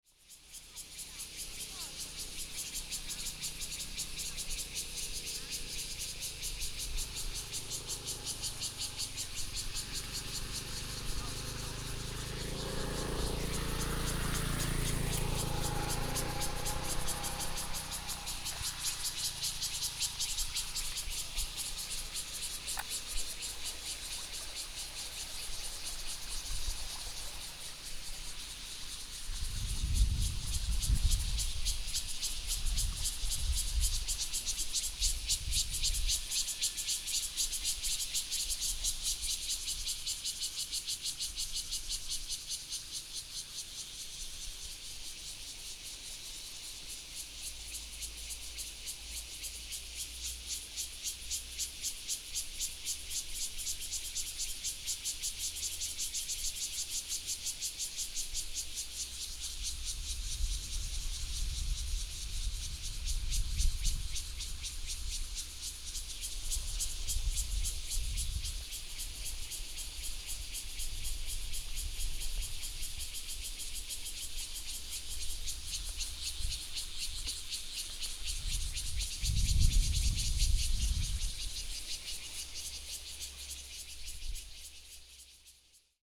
Kanding Rd., Guanshan Township - Cicadas and streams
Cicadas sound, The sound of water, small Town